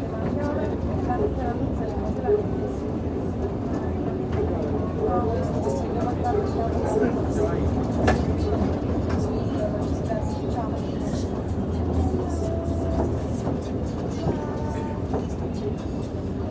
Av. Ayacucho, Medellín, Antioquia, Colombia - Viaje en travía entre san josé y buenos aires
Sonido ambiente de una viaje en travía entre san josé y buenos aires.
Coordenadas: 6°14'50.6"N+75°33'55.7"W
Sonido tónico: voces hablando, sonido de tranvía (motor).
Señales sonoras: niño cantando, celular sonando, puertas abriendo y cerrando, señal de abrir y cerrar puertas.
Grabado a la altura de 1.60 metros
Tiempo de audio: 7 minutos con 43 segundos.
Grabado por Stiven López, Isabel Mendoza, Juan José González y Manuela Gallego con micrófono de celular estéreo.